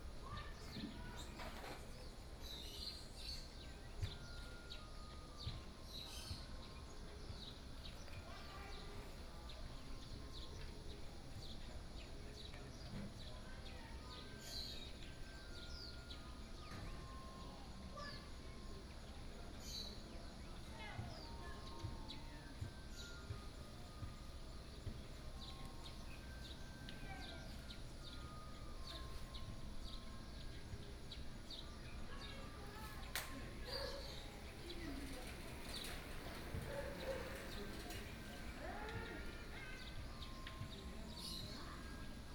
In the square, in front of the temple, Hot weather, Traffic Sound, Birdsong sound, Small village, Garbage Truck
Suao Township, Yilan County, Taiwan, 28 July 2014